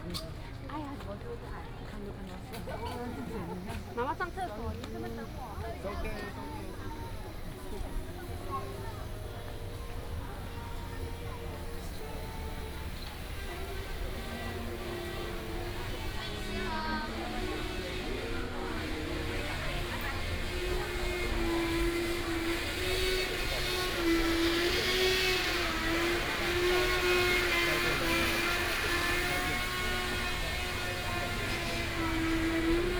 碧潭風景區, Xindian Dist., New Taipei City - the variety of restaurants and shops
Walking through the variety of restaurants and shops
New Taipei City, Taiwan